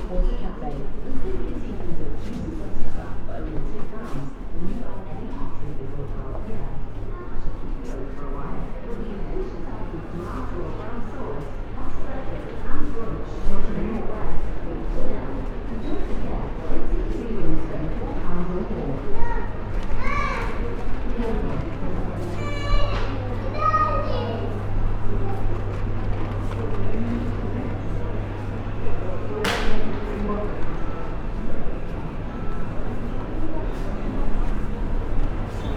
Furniture Store, Hereford, UK - Furniture Store
The shifting ambient sounds recorded while walking around inside a large store. Music, voices, busy cafe.
MixPre 6 II with 2 Sennheiser MKH 8020s in a rucksack.